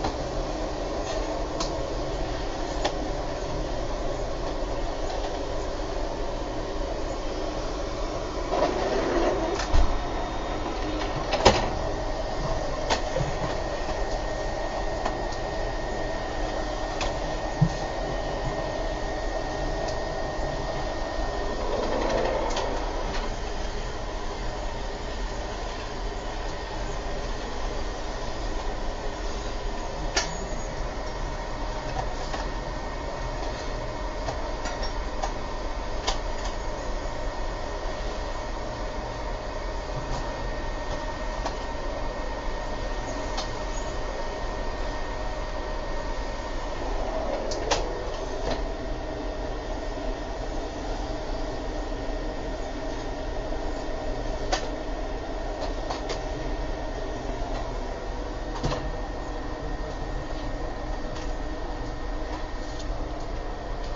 vacuuming the ferrys smoking salon
On the ferry Rødby - Puttgarden there is a smoking salon on board, with easy chairs to stretch out and look at the blue horizon, puffing blue clouds into your neighbours face, deodorized and fitted with puff-and-horizon blue carpeting. this is what this recording is about.
Schleswig-Holstein, Deutschland